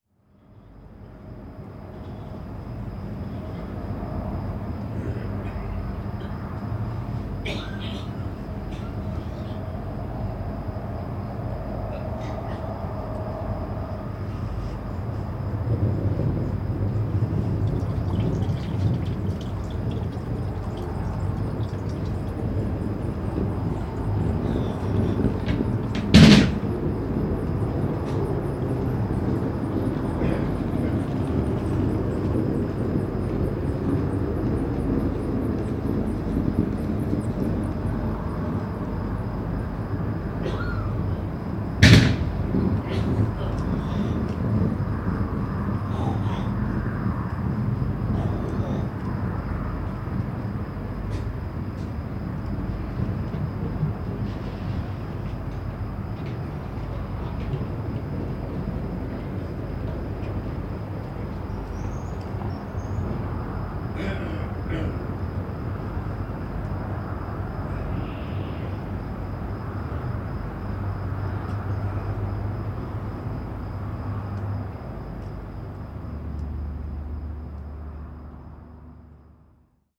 Marina Göcek, Turkey - 918b people leaving boats
As most of the boats are rented from Saturday to Saturday, this is the night when people start to move out - some of them early in the morning.
AB stereo recording (17cm) made with Sennheiser MKH 8020 on Sound Devices MixPre-6 II.